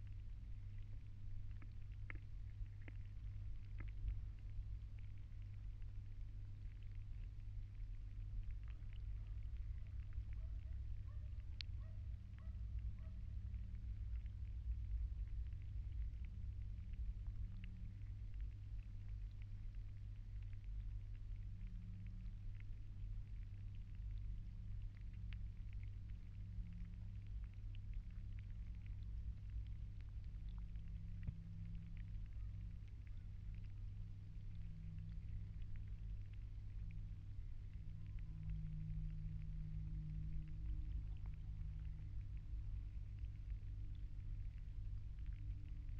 Mic/Recorder: Aquarian H2A / Fostex FR-2LE
10 June, 13:00